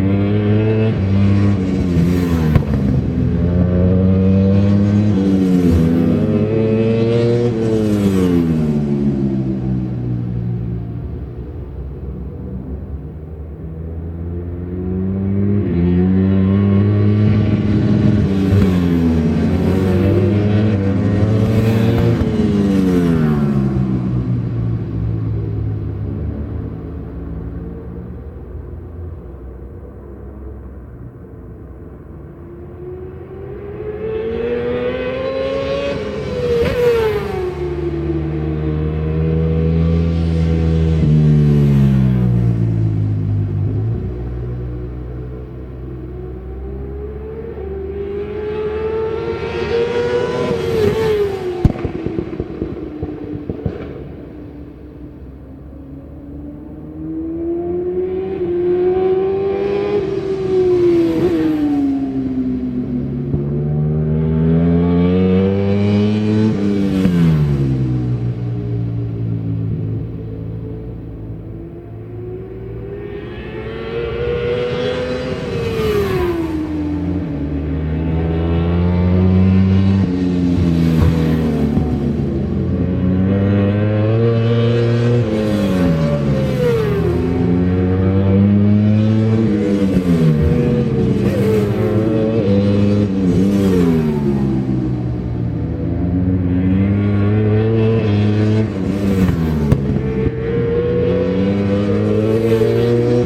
West Kingsdown, UK - World Super Bikes 2000 ... superbikes ...
World Super Bikes warm up ... Brands Hatch ... Dingle Dell ... one point stereo mic to mini-disk ...
Longfield, UK, 15 October 2000